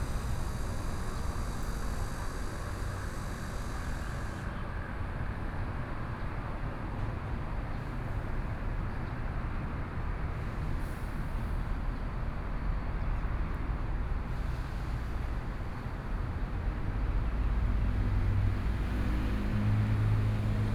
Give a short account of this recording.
At the roadside, Trains traveling through, traffic sound, Birds